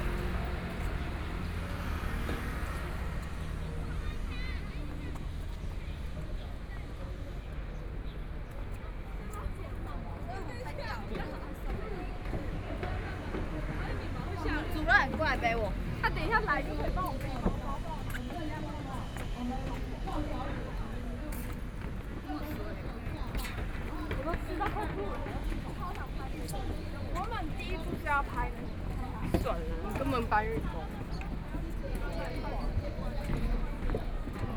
內湖區湖濱里, Taipei City - Walk in the park
Walk in the park, Walking along the lakeTraffic Sound